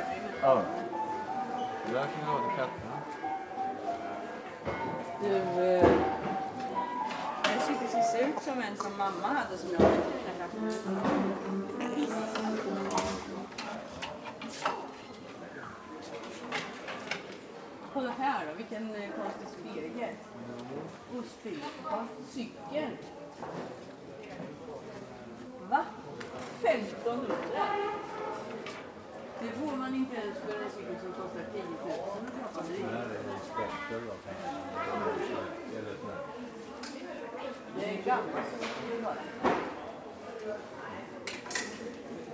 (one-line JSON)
{"title": "hjärta to hjärta, huge 2nd hand boutique", "latitude": "58.43", "longitude": "15.61", "altitude": "38", "timezone": "GMT+1"}